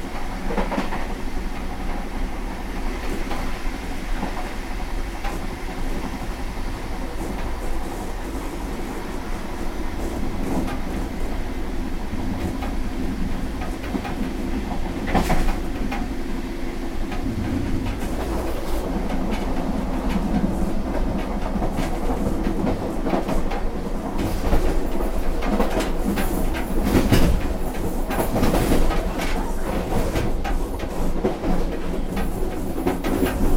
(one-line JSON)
{"title": "grafing station, inside arriving train", "description": "recorded june 6, 2008. - project: \"hasenbrot - a private sound diary\"", "latitude": "48.04", "longitude": "11.94", "altitude": "542", "timezone": "GMT+1"}